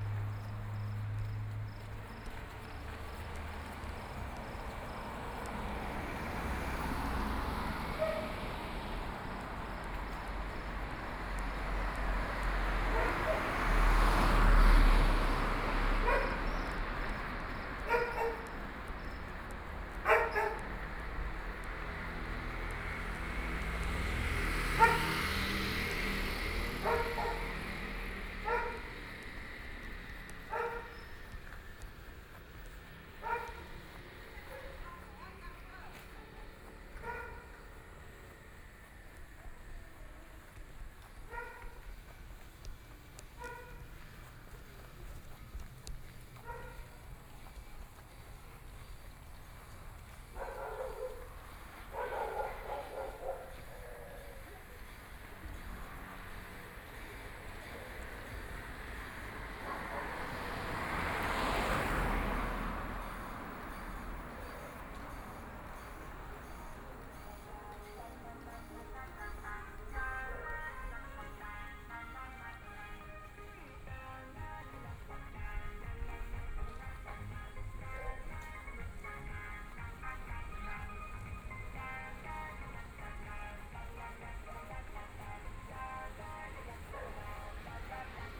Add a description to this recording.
Night walk in the streets of the town, Traffic Sound, Dogs barking, Binaural recordings, Zoom H6+ Soundman OKM II